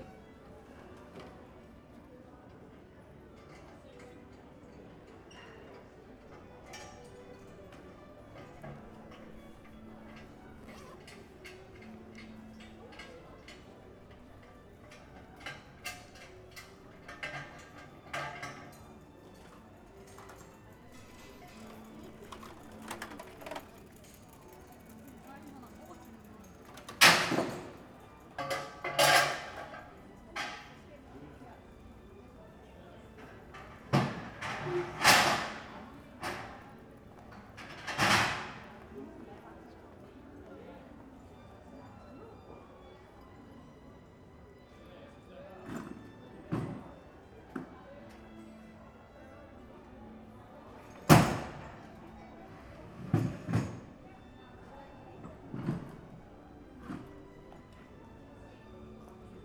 Skindergade, København, Denmark - Workers in side street
Workers packing up a scaffold. Street violin band in the background from nearby shopping street. Pedestrians and cyclists. Swift calls. At the beginning, there are sounds from a commercial demonstration
Ouvriers rangeant un échauffaudage. Groupe de rue (violon) de la rue commercante voisine. Piétons et cyclistes. Cris de martinets. Au début, on peut entendre une manifestation à but commercial